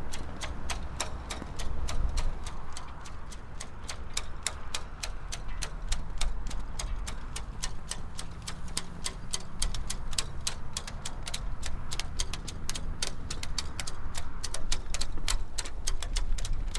wind at Portland Marina 9.12.11
sailing masts in wind at Marina
Dorset, UK, 19 December 2011, ~12:00